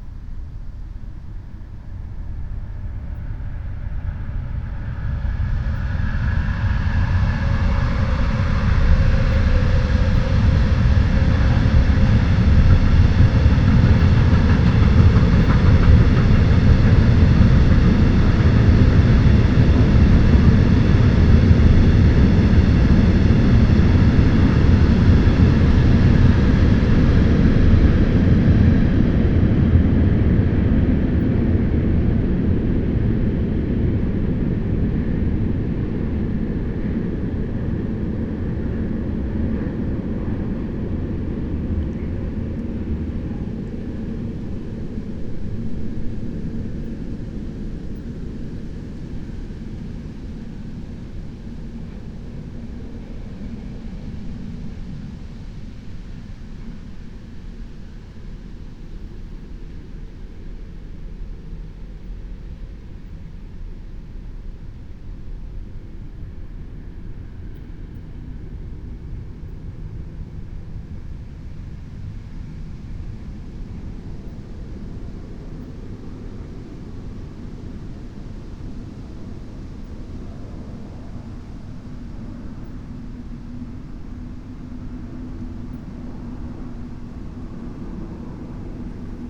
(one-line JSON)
{"date": "2021-08-31 04:00:00", "description": "04:00 Berlin, Alt-Friedrichsfelde, Dreiecksee - train junction, pond ambience", "latitude": "52.51", "longitude": "13.54", "altitude": "45", "timezone": "Europe/Berlin"}